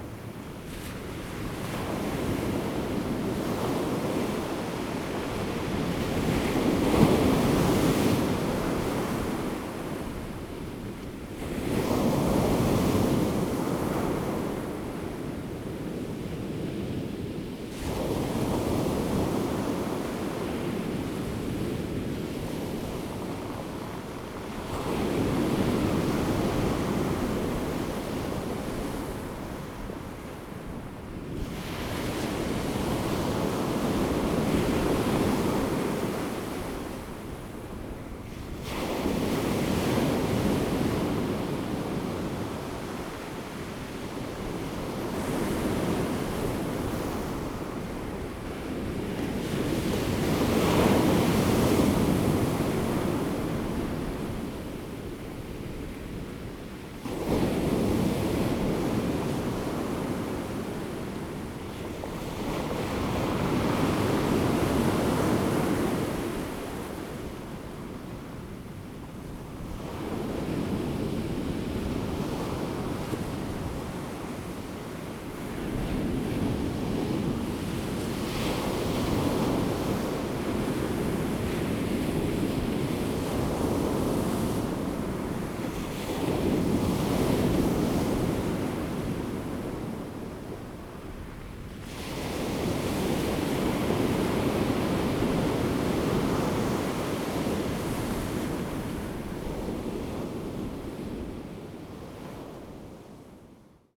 Daren Township, Taitung County - Sound of the waves
Sound of the waves, The weather is very hot
Zoom H2n MS +XY
Taitung County, Daren Township, 台26線, 5 September